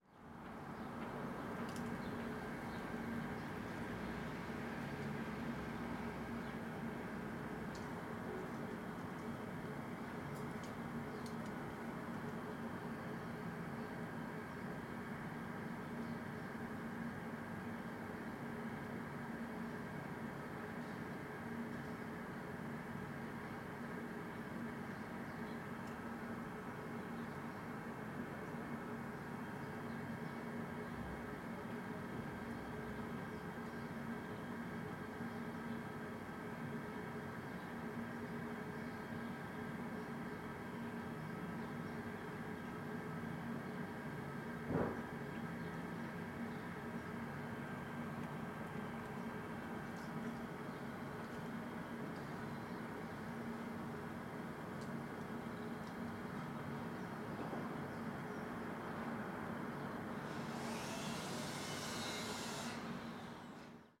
Contención Island Day 83 outer northwest - Walking to the sounds of Contención Island Day 83 Sunday March 28th
The Drive Westfield Drive Parker Avenue Elgy Road Elmfield Road Oakfield Terrace
Across from a terrace of cottages
blue builders bags
of stones
Stepped back from the road
back from the wind
an olive tree sways
North East England, England, United Kingdom, 28 March 2021